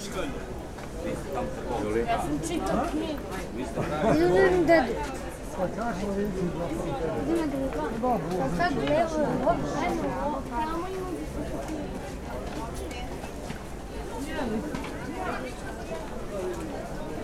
A Soundwalk through Đeram Market in Belgrade